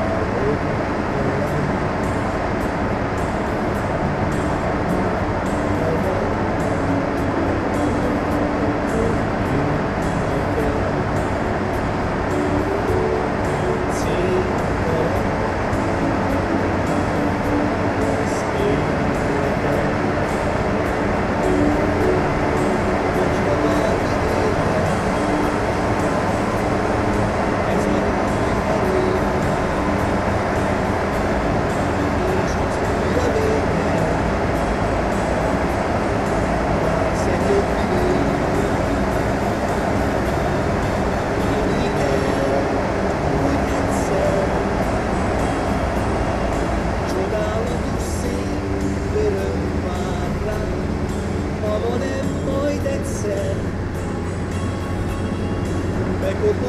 Schwechat, Rakúsko - 'Van egy álmom' / 'I have a dream'
On the road with Gyuri, a Hungarian migrant worker on his way from Linz to Szederkény, accompanied by a homey version of Abba's 'I have a dream' sounding from his car stereo - four minutes of illusions about Central Europe.